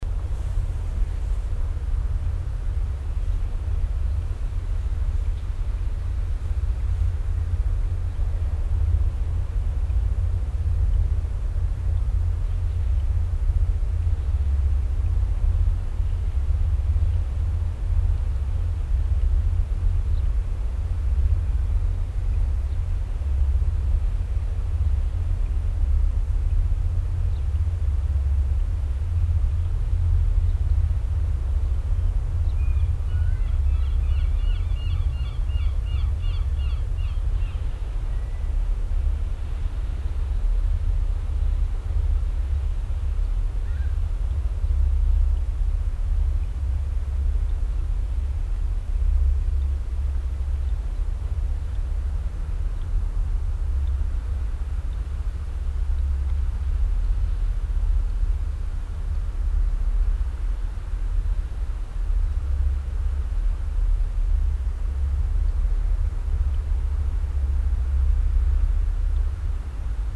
audresseles, meeresufer bei ebbe, vorbeifahrt fähre
morgens am meeresufer bei ebbe, möwen im aufflug, die dröhnende resonanz der vorbeifahrt der stündlich verkehrenden speed fähre
fieldrecordings international:
social ambiences, topographic fieldrecordings